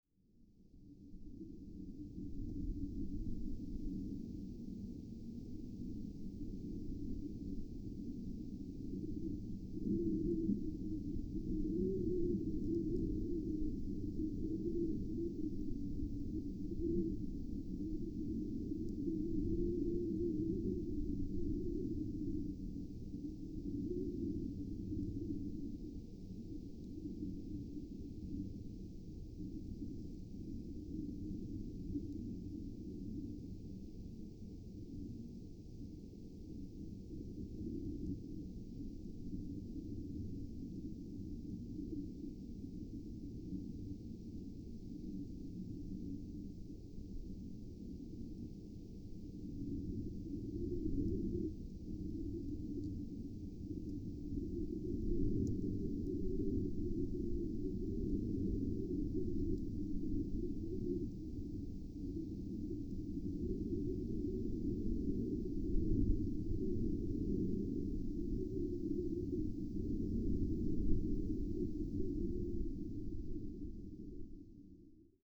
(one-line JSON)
{"title": "Cardon Central, Región de Magallanes y de la Antártica Chilena, Chile - storm log - Corre Tabas hilltop", "date": "2019-03-11 14:02:00", "description": "Corre Tabas hilltop, wind SW 35 km/h\nOne hour hike south from the end of the road-construction. Since August 2011 the Chilean Army is building a road south from the Azopardo River to Yendegaia Bay, which will provide the first road access to the Chilean section of the Beagle Channel.", "latitude": "-54.62", "longitude": "-68.73", "altitude": "751", "timezone": "America/Punta_Arenas"}